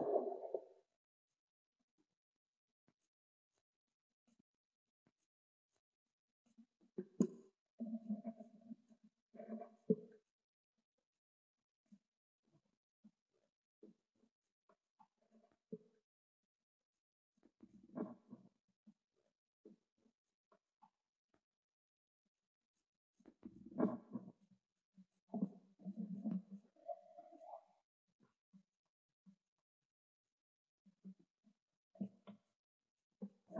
Tündern, Hameln, Germany Underwater Sounds Weser Lakes - UNDERWATER SOUNDS (Lakes at the Wesser)
Sounds underwater at the lake along the Weser river in Hameln, recorded with Underwater camera and microphones for underwater sound recordings. Recording took place in July 2017.